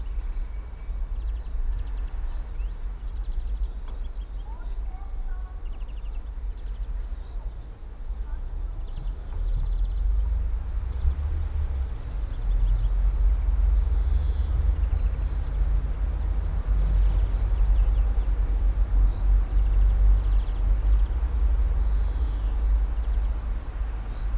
all the mornings of the ... - mar 26 2013 tue
Maribor, Slovenia, 26 March 2013